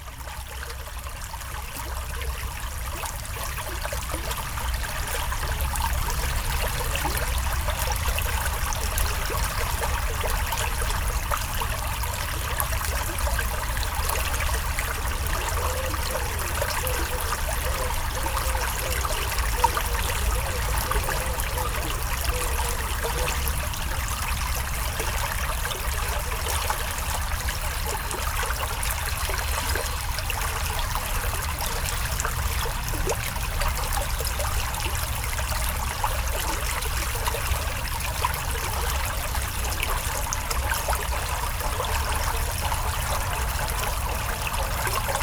Genappe, Belgique - Cala river
The Cala river is a small river, flowing from Genappe to Court-St-Etienne. It's an industrial landscape approaching the big road called avenue des Combattants.
Genappe, Belgium, 30 August, ~09:00